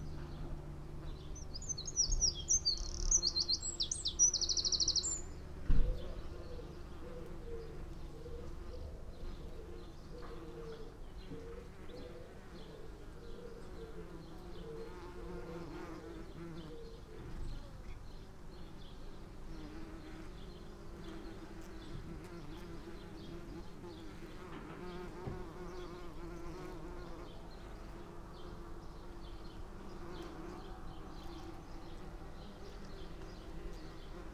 Chapel Fields, Helperthorpe, Malton, UK - bees on lavender ...
bees on lavender ... xlr sass skyward facing to zoom h5 ... between two lavender bushes ... unattended time edited extended recording ... bird calls ... song ... from ... dunnock ... coal tit ... wood pigeon ... swallow ... wren ... collared dove ... blackbird ... house sparrow ... house martin ... blue tit ... goldfinch ... linnet ... plenty of traffic noise ...
July 2022